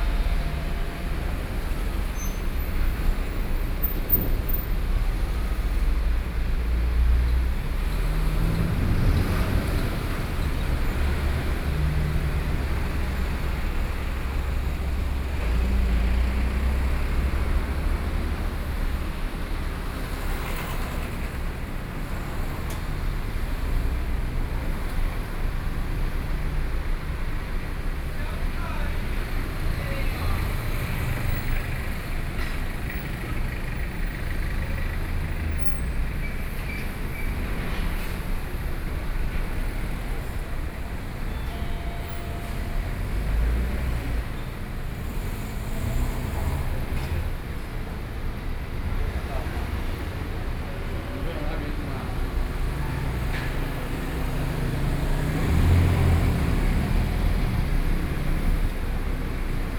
Yangmei, 楊梅鎮 Taoyuan County - Intersection
Traffic Noise, Sony PCM D50 + Soundman OKM II
楊梅鎮 Taoyuan County, Taiwan, 14 August 2013